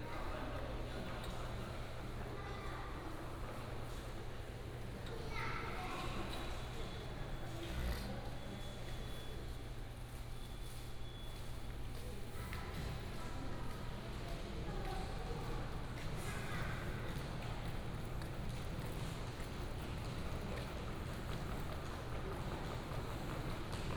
桃園火車站, Taoyuan City - In the station hall
In the station hall, Station broadcast message sound, Luggage, lunar New Year
Binaural recordings, Sony PCM D100+ Soundman OKM II
Taoyuan City, Taiwan, 15 February 2018